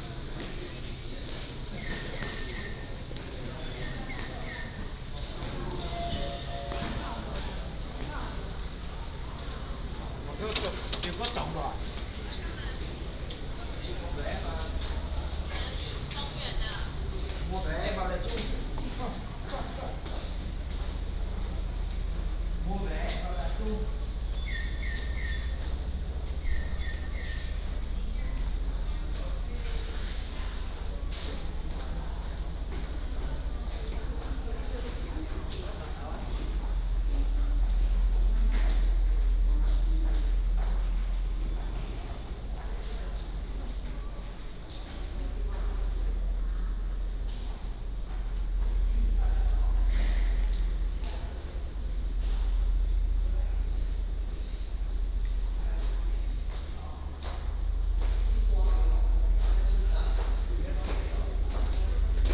walking in the Station, From the station hall, To the station platform, Footsteps
彰化縣(Changhua County), 中華民國, 13 February 2017